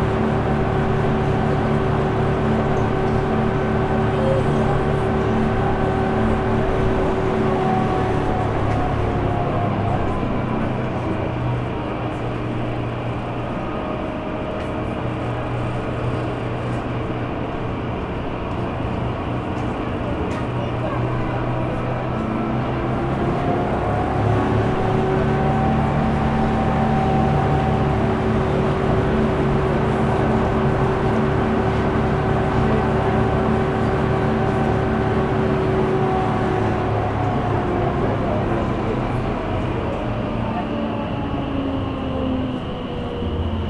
Gare du téléphérique de la Bastille le moteur au cours de la montée des cabines, les bruits de la circulation, les voix dans la file d'attente.